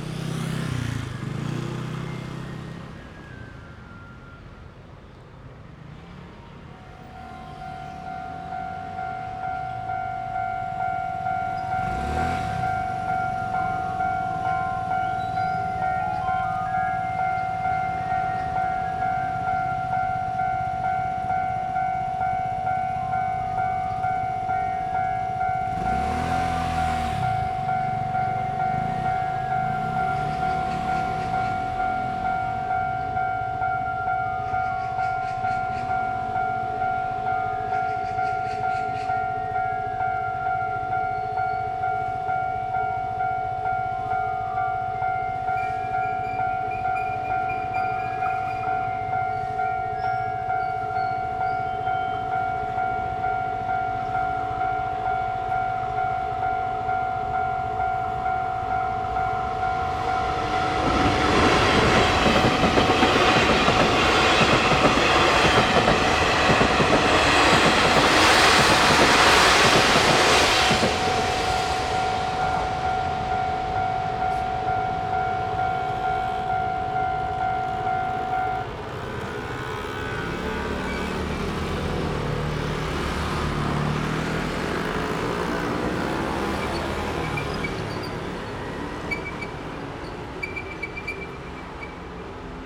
Xingzhu St., East Dist., Hsinchu City - in the railroad crossing
In the railway level road, Traffic sound, Train traveling through
Zoom H6 +Rode NT4
Hsinchu City, Taiwan, 13 February 2017